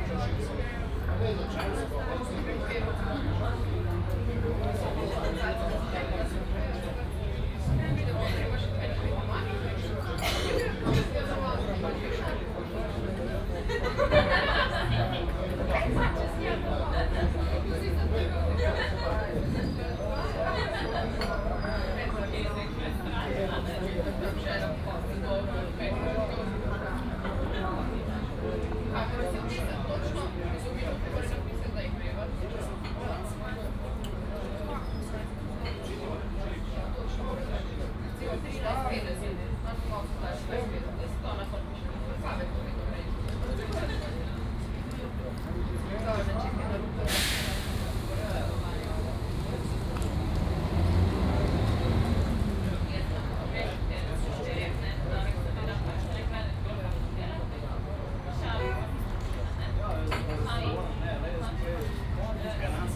Ljubljana main station - cafe ambience
coffee break a Ljubljana main station
(Sony PCM D50, OKMII)
Ljubljana, Slovenia